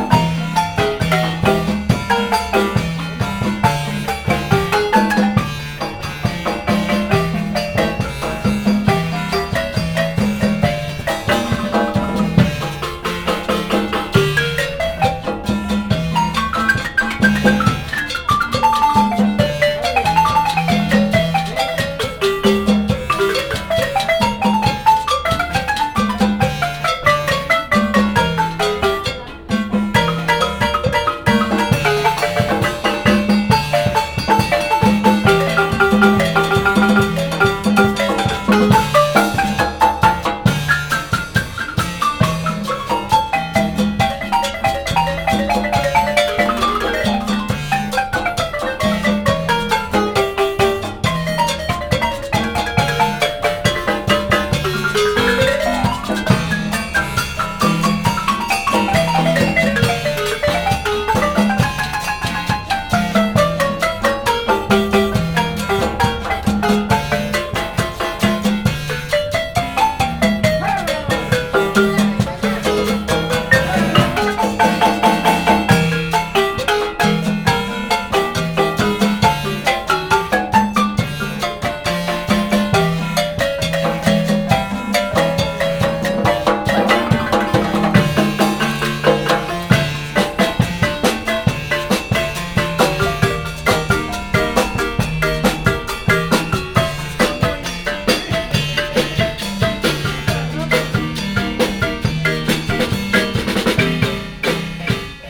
Approaching 3 beautiful musicians at a market in Roma Sur.